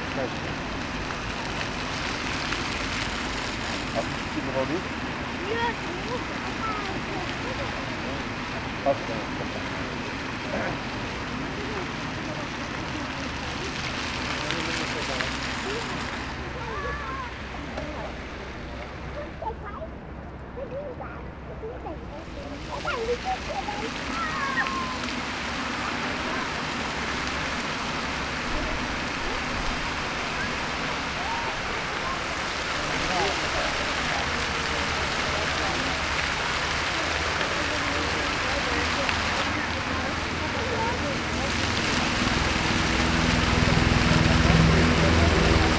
Skwer 1 Dywizji Pancernej WP, Warszawa, Pologne - Multimedialne Park Fontann (d)
Multimedialne Park Fontann (d), Warszawa
Warsaw, Poland